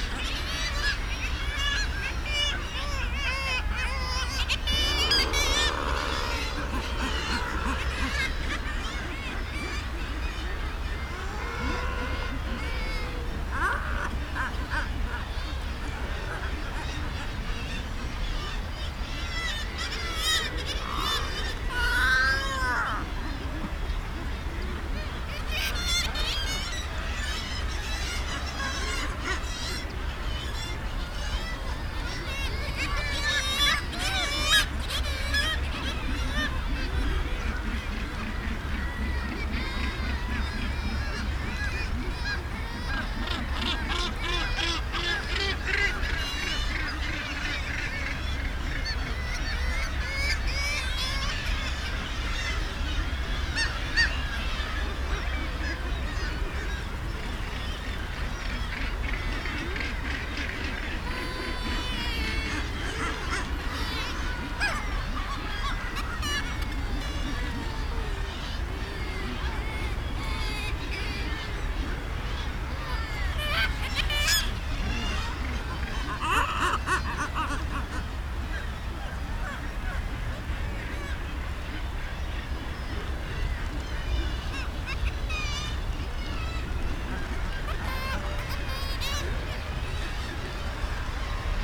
{"title": "East Riding of Yorkshire, UK - Kittiwakes ... mostly ...", "date": "2017-05-24 06:10:00", "description": "Kittiwakes ... mostly ... kittiwakes calling around their nesting ledges at RSPB Bempton Cliffs ... bird calls from ... guillemot ... razorbill ... gannets ... lavalier mics on a T bar fastened to a fishing net landing pole ... some wind blast and background noise ...", "latitude": "54.15", "longitude": "-0.17", "altitude": "57", "timezone": "Europe/London"}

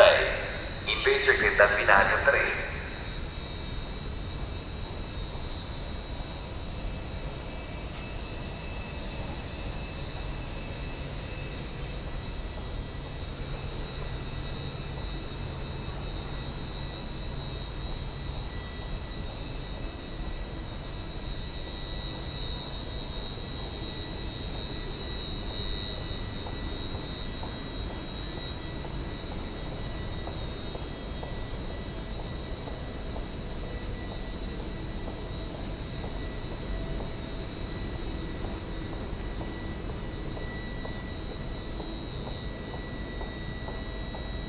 {"title": "Ascolto il tuo cuore, città, I listen to your heart, city, Chapter CXXXII - Second far soundwalk and soundtraintrip with break in the time of COVID19: Soundwalk", "date": "2020-10-08 06:55:00", "description": "\"Second far soundwalk and soundtraintrip with break in the time of COVID19\": Soundwalk\nChapter CXXXV of Ascolto il tuo cuore, città. I listen to your heart, city\nThursday, October 8th 2020, five months and twenty-seven days after the first soundwalk (March 10th) during the night of closure by the law of all the public places due to the epidemic of COVID19.\nThis path is part of a train round trip to Cuneo: I have recorded only the walk from my home to Porta Nuova rail station and the train line to Lingotto Station. This on both outward and return\nRound trip where the two audio files are joined in a single file separated by a silence of 7 seconds.\nfirst path: beginning at 6:55 a.m. end at 7:25 a.m., duration 29’35”\nsecond path: beginning at 5:32 p.m. end al 5:57 p.m., duration 24’30”\nTotal duration of recording 00:54:13\nAs binaural recording is suggested headphones listening.\nBoth paths are associated with synchronized GPS track recorded in the (kmz, kml, gpx) files downloadable here:", "latitude": "45.06", "longitude": "7.68", "altitude": "248", "timezone": "Europe/Rome"}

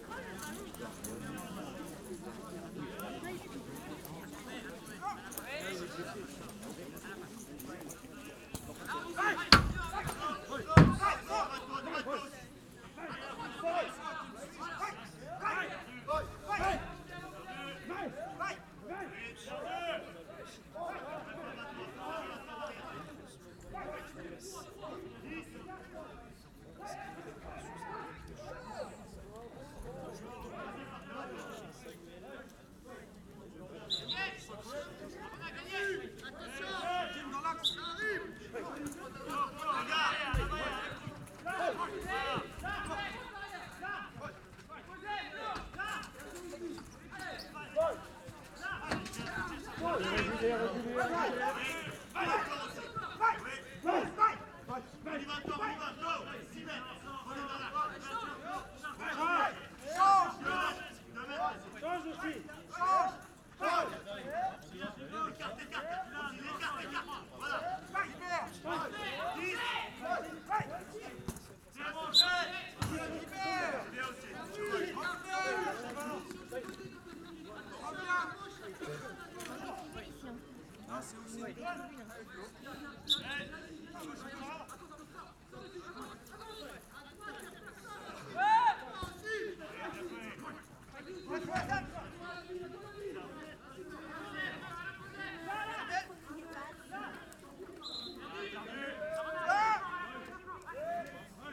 {"title": "Rue de Sorel, Précy-sur-Oise, France - L'essentiel est invisible pour les yeux", "date": "2022-01-15 11:15:00", "description": "Match de championnat de France / poule Nord de Cécifoot opposant Précy-sur-Oise et Schiltigheim.\nBlind foot match of the French League / Nord pool, opposing Précy-sur-Oise and Schiltigheim.\nZoom H5 + clippy EM272", "latitude": "49.21", "longitude": "2.38", "altitude": "36", "timezone": "Europe/Paris"}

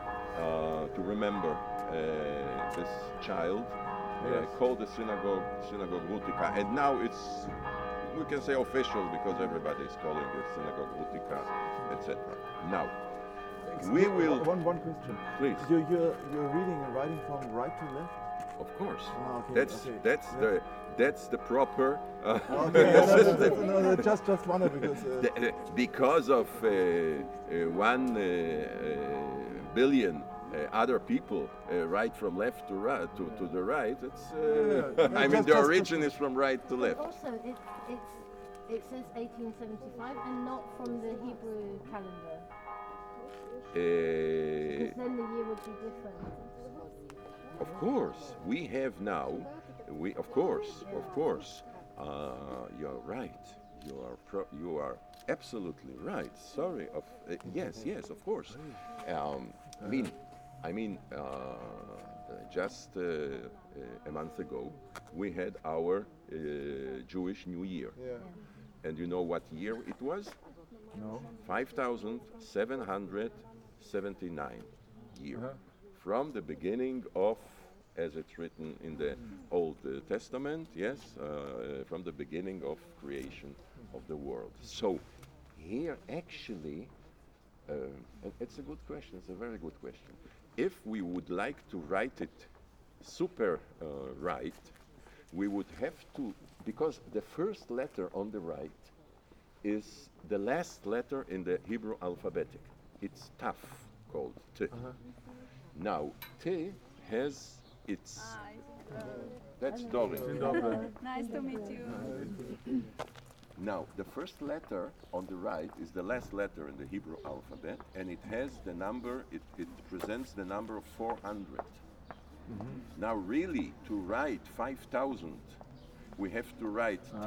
{"title": "Synagoge, Dzierżoniów, Polen - in front of the Synagogue", "date": "2018-10-21 11:35:00", "description": "Dzierżoniów (german: Reichenbach am Eulengebirge), in front of the synagogue, Rafael Blau starts to tell the story of the building to members of an educational journey, Sunday bells of the nearby church\n(Sony PCM D50)", "latitude": "50.73", "longitude": "16.65", "altitude": "272", "timezone": "Europe/Warsaw"}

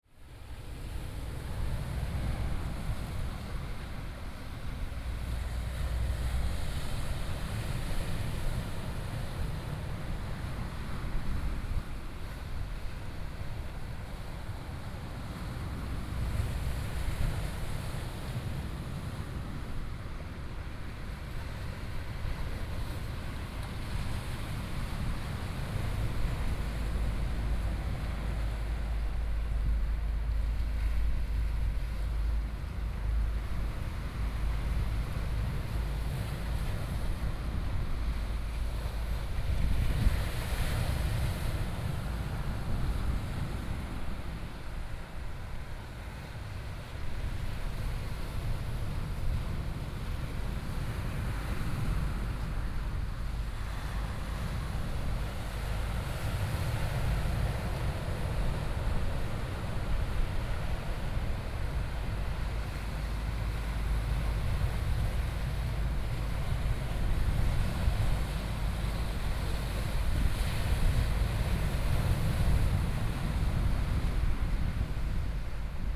{"title": "金沙灣海濱公園, 貢寮區 - Sound of the waves", "date": "2014-07-21 12:43:00", "description": "Sound of the waves, Traffic Sound, Very hot weather\nSony PCM D50+ Soundman OKM II", "latitude": "25.08", "longitude": "121.92", "altitude": "5", "timezone": "Asia/Taipei"}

